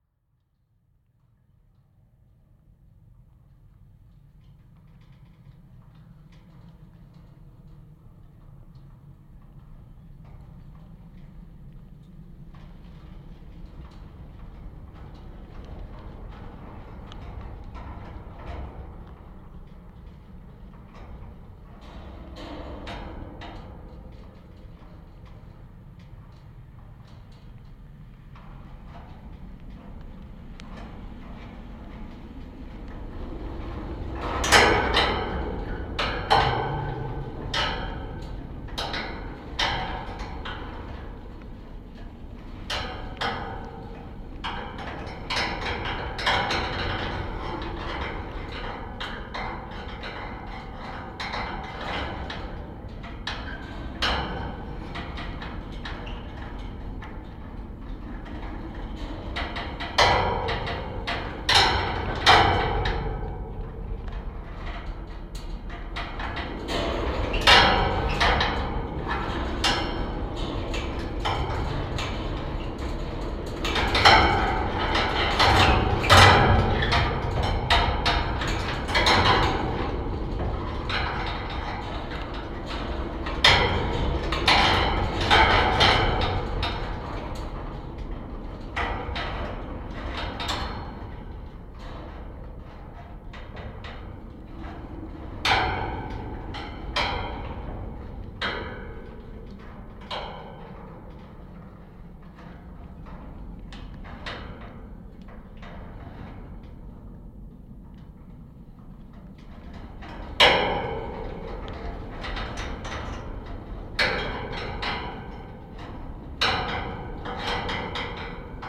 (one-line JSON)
{"title": "Gėlių g., Ringaudai, Lithuania - Construction site wire fence", "date": "2021-04-15 19:00:00", "description": "Quadruple contact microphone recording of a construction site fence near a newly built IKI store. Mostly just wind rattling the wire fence. Recorded using ZOOM H5.", "latitude": "54.89", "longitude": "23.81", "altitude": "82", "timezone": "Europe/Vilnius"}